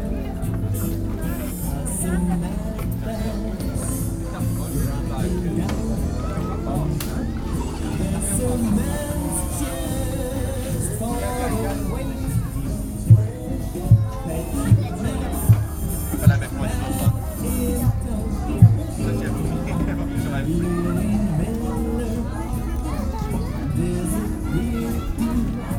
Düsseldorf, Luegallee, christmas market - duesseldorf, luegallee, christmas market
"schiffsschaukel" on a small christmas market nearby the street. the music and the sound of the machine
soundmap nrw - social ambiences and topographic field recordings
19 April 2010, 11:23